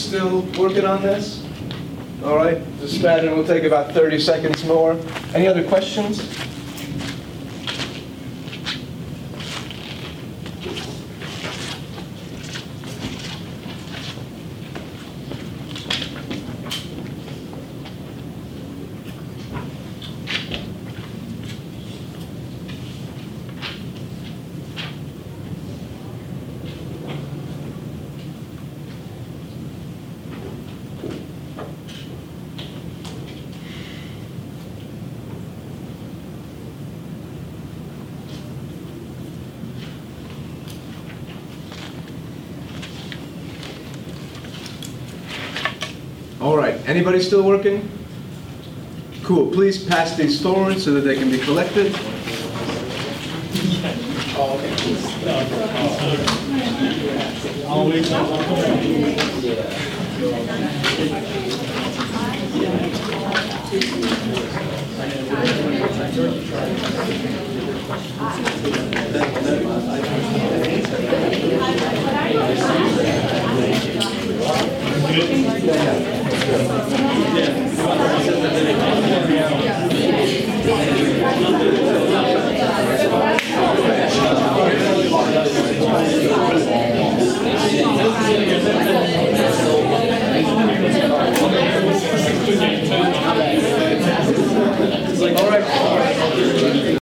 Boone, NC, USA - A Quiz in Sanford Hall
The sounds of a quiz being taken.
23 September 2015, ~14:00